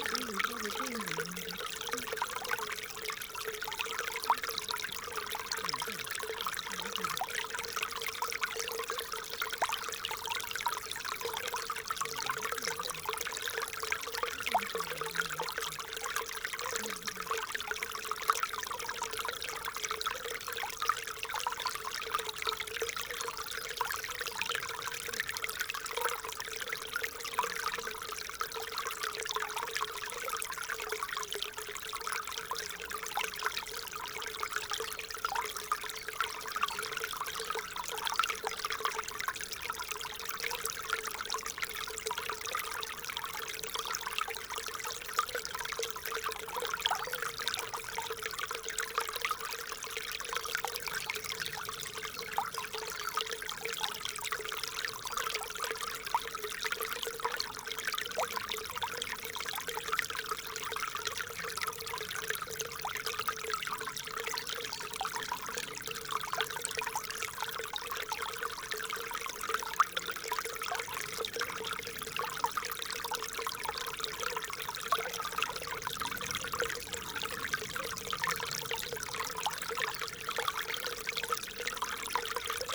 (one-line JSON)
{"title": "Villers-la-Ville, Belgique - Ry Pirot stream", "date": "2017-04-11 16:20:00", "description": "The small Ry Pirot stream in the woods, and walkers.", "latitude": "50.60", "longitude": "4.54", "altitude": "116", "timezone": "Europe/Brussels"}